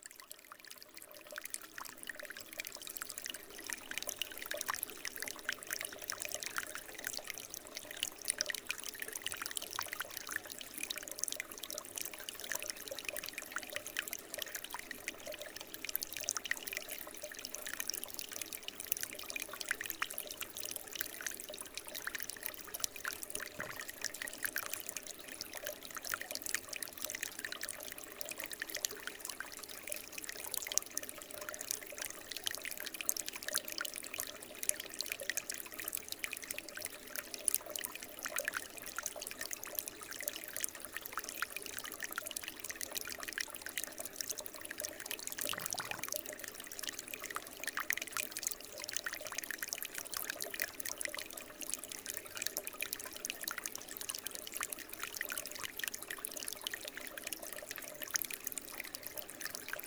Saint-Cierge-la-Serre, France - Small stream
In an underground mine and just near the entrance, a small stream makes a soft sound.
23 April 2016, ~13:00